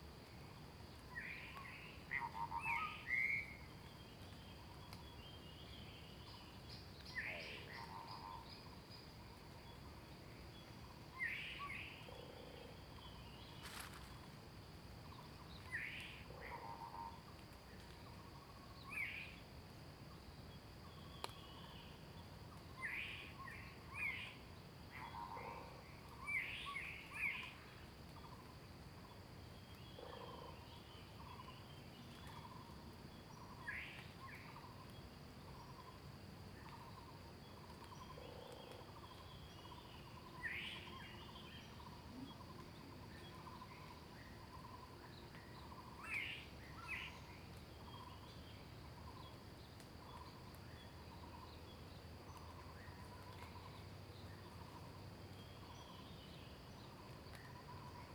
水上, 桃米里 Nantou County - birds sound
In the woods, birds sound
Zoom H2n MS+XY
Puli Township, 水上巷, 19 April 2016